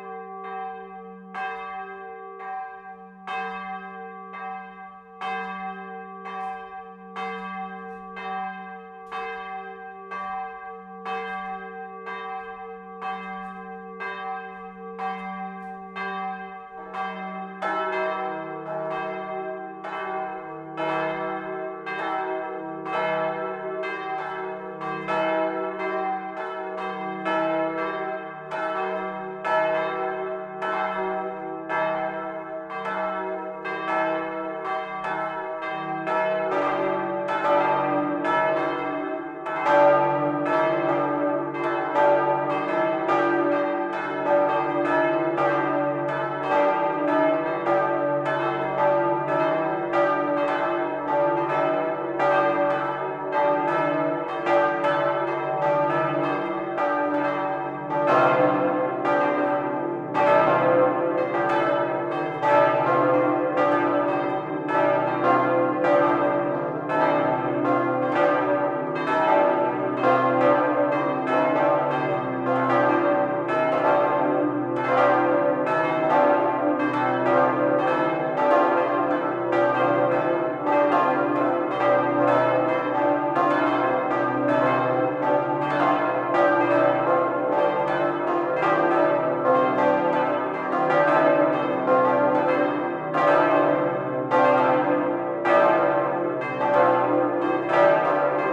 Pl. Saint-Vaast, Armentières, France - Armantières - église St-Vaast - volée tutti
Armentières (Nord)
tutti volée des cloches de l'église St-Vaast
Hauts-de-France, France métropolitaine, France, 1 July